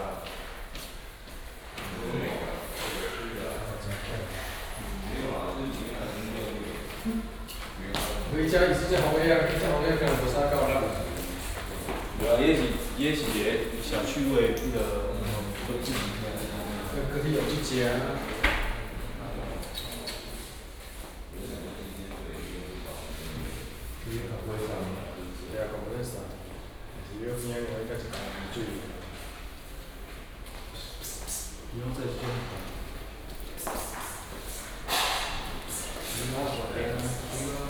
Fongshan, Kaohsiung - Artists and workers
鳳山區 (Fongshan), 高雄市 (Kaohsiung City), 中華民國, 18 May, 15:26